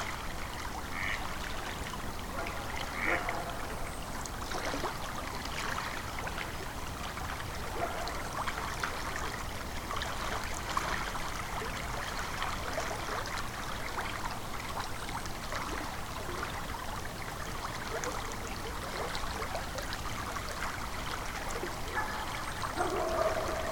{
  "title": "Anyksciai, Lithuania, listening to river Sventoji",
  "date": "2021-11-01 16:45:00",
  "description": "Standing on a trail path and listening to river Sventoji",
  "latitude": "55.50",
  "longitude": "25.07",
  "altitude": "70",
  "timezone": "Europe/Vilnius"
}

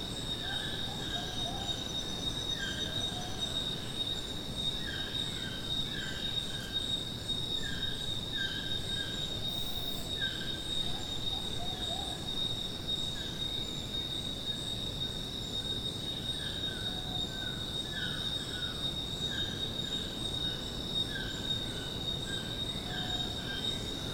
{
  "title": "Tenorio Volcano National Park - Heliconias Lodge - Canopy ambience",
  "date": "2014-03-05 09:30:00",
  "description": "a short recording on my Olympus LS-10S",
  "latitude": "10.72",
  "longitude": "-85.04",
  "altitude": "774",
  "timezone": "America/Costa_Rica"
}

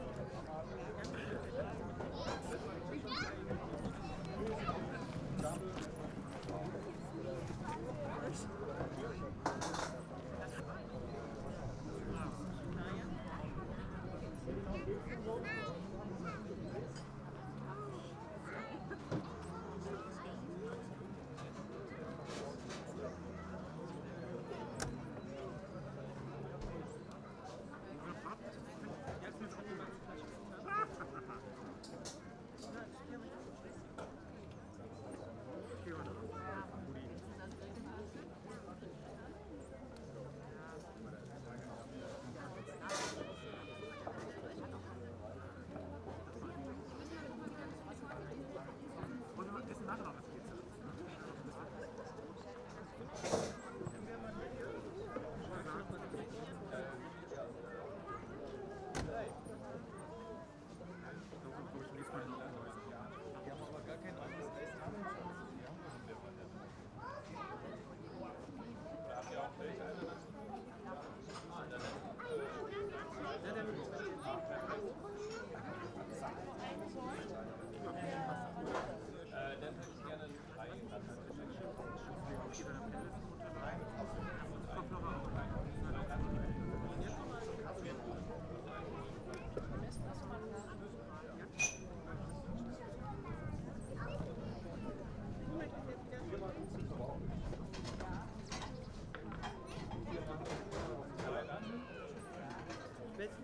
{
  "title": "Hobrechtsfelde, Panketal, Deutschland - outdoor cafe, weekend ambience",
  "date": "2021-10-02 16:07:00",
  "description": "Hobrechtsfelde, Speicher, former agricultural storage building, now a recreation place with a cafe bar, rest place for hikers and playgrounds for kids, ambience on a warm Saturday afternoon in early autumn\n(Sony PCM D50)",
  "latitude": "52.67",
  "longitude": "13.49",
  "altitude": "62",
  "timezone": "Europe/Berlin"
}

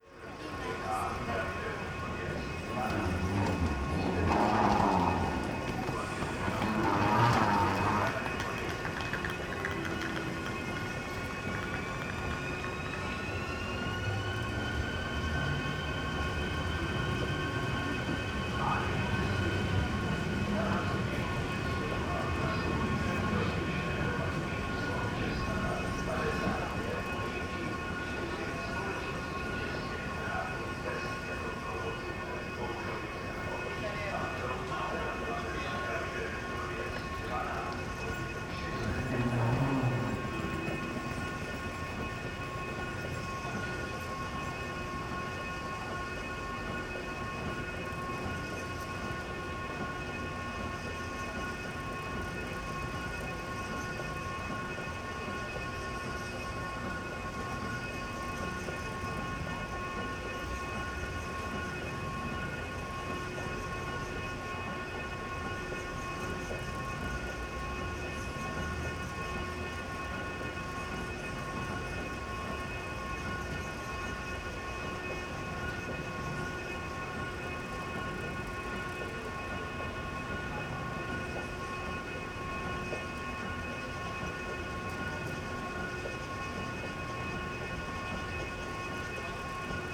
{
  "title": "main station, Ústí nad Labem - station ambience, escalator",
  "date": "2017-09-22 12:35:00",
  "description": "Ústí main station, ambience, sounds near escalator (Sony PCM D50, Primo EM172)",
  "latitude": "50.66",
  "longitude": "14.04",
  "altitude": "144",
  "timezone": "Europe/Prague"
}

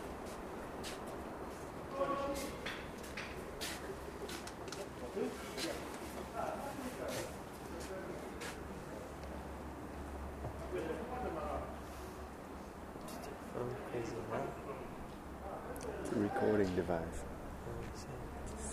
{
  "title": "Istanbul - Berlin: Relocomotivication in Ruse Station",
  "date": "2010-10-28 15:40:00",
  "description": "The express train Istanbul - Bucuresti in the main station of Ruse, waiting for its romanian locomotive to draw it across the Danube. A few moments of pause on a long voyage.",
  "latitude": "43.83",
  "longitude": "25.96",
  "altitude": "61",
  "timezone": "Europe/Sofia"
}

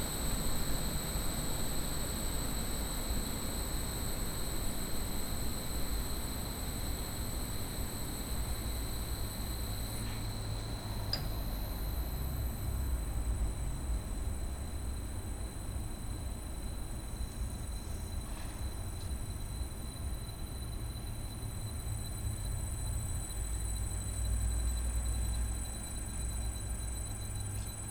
{
  "title": "Maribor, Vodnikova trg, marketplace - ventilation drone",
  "date": "2012-07-31 20:50:00",
  "description": "Maribor, Vodnikov, below market place, ensemble of 6 ventilators humming, then slowly fading out.\n(SD702 + DPA4060)",
  "latitude": "46.56",
  "longitude": "15.64",
  "altitude": "262",
  "timezone": "Europe/Ljubljana"
}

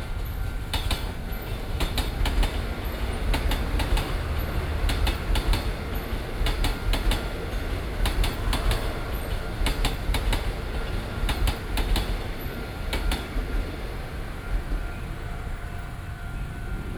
{
  "title": "Jung Li City, Taoyuan - Train traveling through",
  "date": "2012-06-11 20:26:00",
  "description": "Level crossing, Train traveling through, Sony PCM D50 + Soundman OKM II",
  "latitude": "24.97",
  "longitude": "121.26",
  "altitude": "124",
  "timezone": "Asia/Taipei"
}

